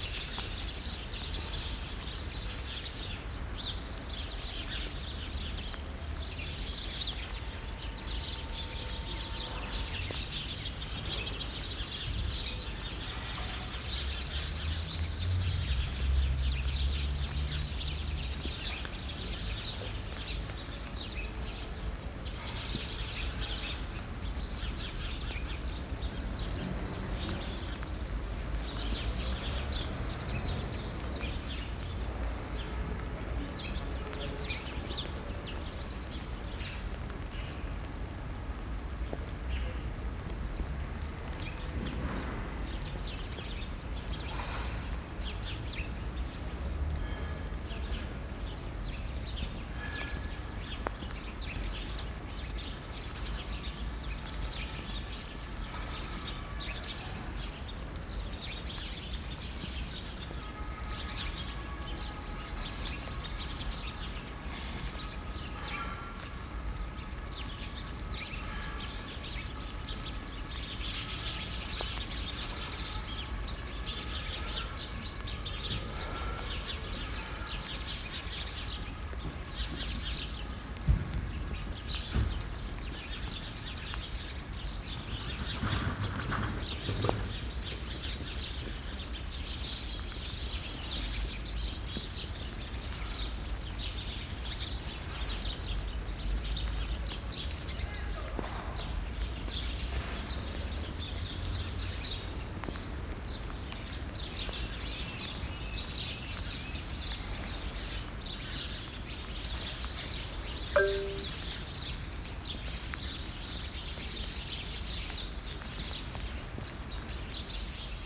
Alexandrinenstraße, Berlin, Germany - Backyard in rain with sparrows and building work
There is a small sand pit for children in this secluded spot with one wooden bench to sit on. If one does you are facing an apartment block that is being renovated. It is covered with white sheets that flap silently when there's a breeze. Occasionally distance sounds of dropping material can be heard. The rain increases but the chattering sparrows pay it no attention.